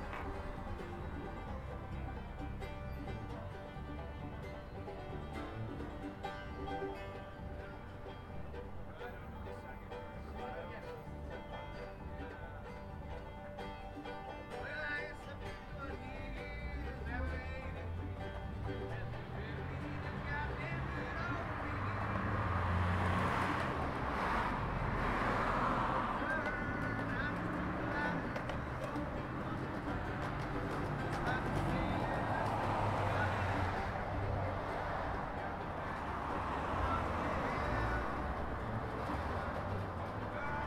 Uintah Street, Colorado Springs, CO, USA - Bluegrass and Traffic
Recorded from 315 Uintah. People were playing bluegrass across the street.
29 April 2018, ~20:00